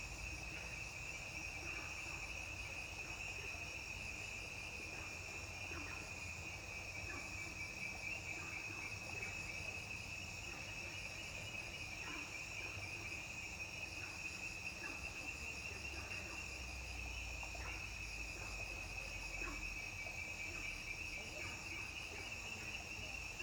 桃米巷, 桃米里 - Frogs sound
Ecological pool, Frogs chirping
Zoom H2n MS+XY
Puli Township, 桃米巷16號